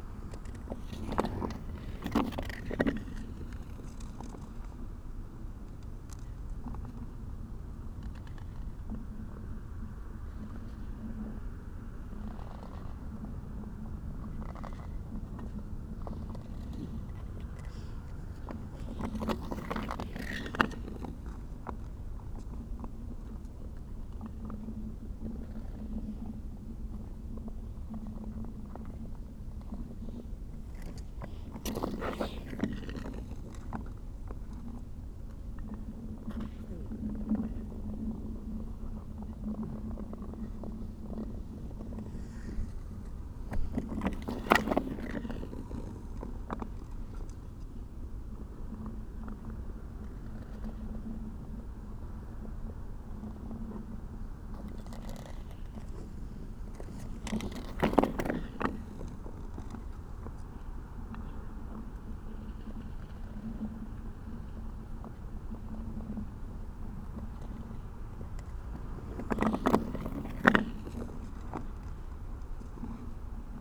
Ice skater on frozen lake...a solitary guy was ice skating around and around in a circle...in fresh powdery dry snow on the frozen solid lake...my 1st perspective was to the side, 2nd perspective was inside his circle...
강원도, 대한민국, 10 January 2021, 13:00